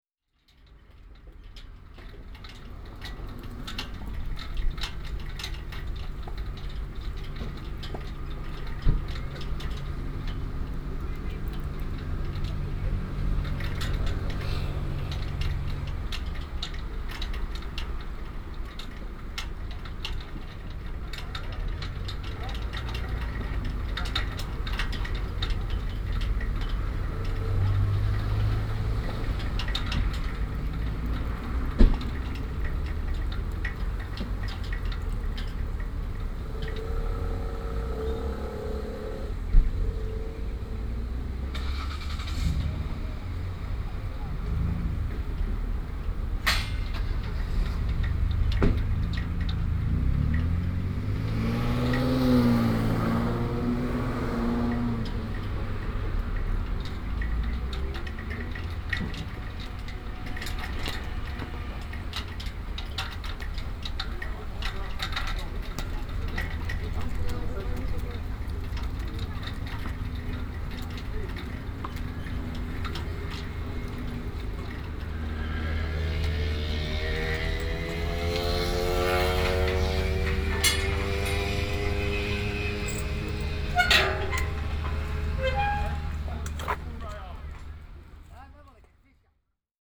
entree tennisvereniging
vlaggen bij de ingang van de tennisvereniging
flags at the entance of the tennis courts, traffic